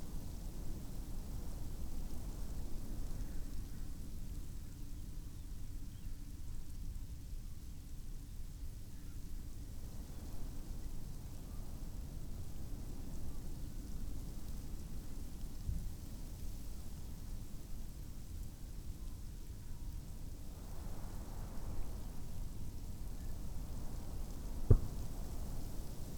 Green Ln, Malton, UK - under a hedge ... wind ... snow showers ...
under a hedge ... wind ... snow showers ... xlr SASS to Zoom H5 ... bird calls ... crow ... yellowhammer ... skylark ... pheasant ... buzzard ... taken from unattended extended unedited recording ...
England, United Kingdom